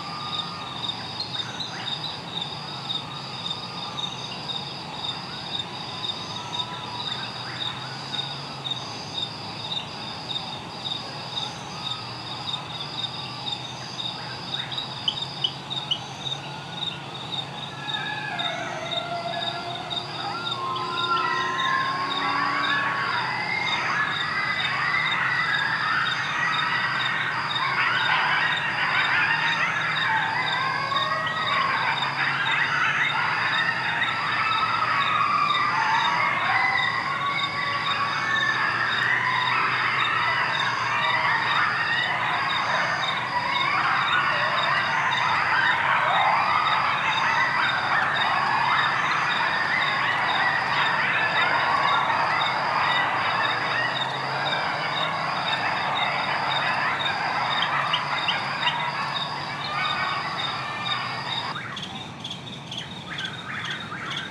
McKinney Falls State Park, TX, USA - Midnight Coyotes
Recorded with a pair of DPA 4060s and a Marantz PMD661